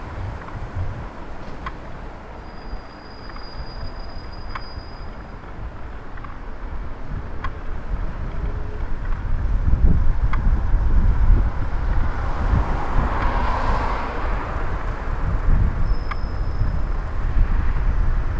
Legnica, Polska - reggae traffic light
Legnica, Poland, 11 October 2014, 14:24